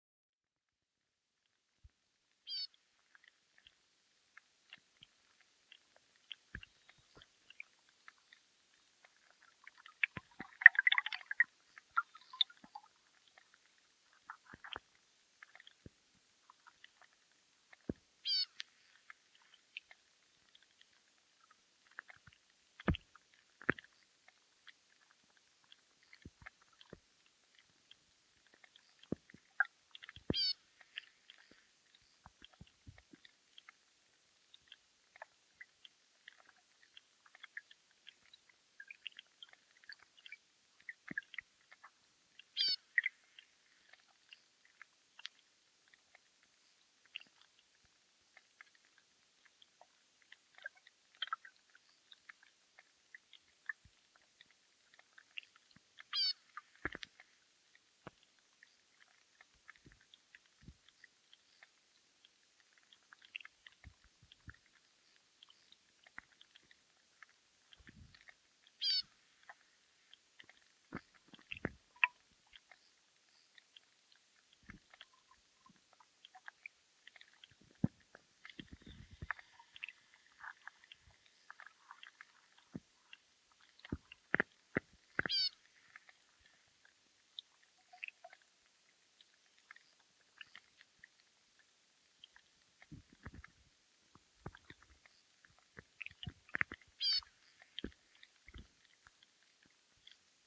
Pakalniai, Lithuania, swamp underwater
hydrophone recording in the swamp. some creature's voice...
Utenos apskritis, Lietuva, 9 May 2020